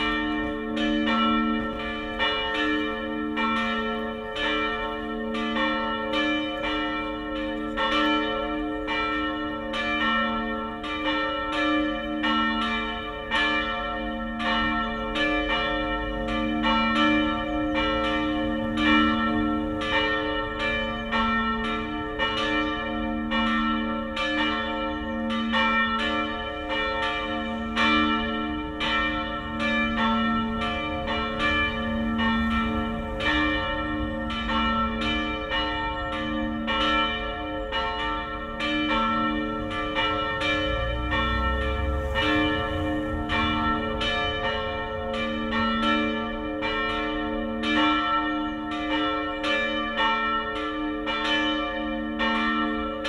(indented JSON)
{
  "title": "Redemptorists' church, Kraków, Poland - (880) Bells",
  "date": "2022-01-02 12:00:00",
  "description": "Binaural recording of Redemptorists' church bells at noon on Sunday.\nRecorded with Sennheiser Ambeo headset.",
  "latitude": "50.04",
  "longitude": "19.94",
  "altitude": "215",
  "timezone": "Europe/Warsaw"
}